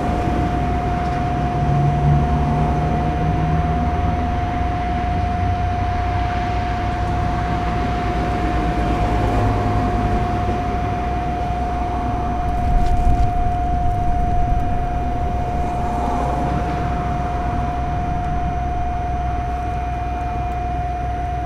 Jaroczynskiego, Poznan - in the back of a restaurant
hum and whine of commercial AC units and exhaust fans on top of a restaurant. Jaroczynskiego street is busy all day long so you can hear a lot of traffic (sony d50 internal mics)
Poznań, Poland, 24 August 2018, ~12:00